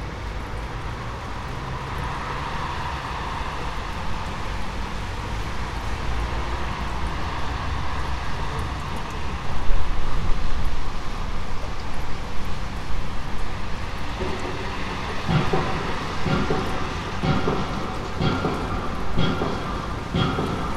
Dekerta, Kraków, Poland - (755 UNI) Construction site closing in
Construction mentioned in (704 UNI) as distant, is now closing in. A huge machine that seems to act like a tremendous hammer is banging closer and closer to my window and it can't be much closer (I suppose).
Recorded with UNI mics of Tascam DR100 MK3.